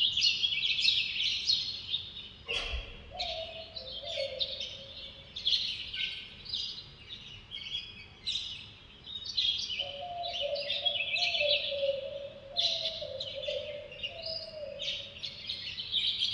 Convent of Santa Catalina de Siena, Oaxaca, Oax., Mexico - Dawn Birds After a Wedding
Recorded with a pair of DPA4060s and a Marantz PMD660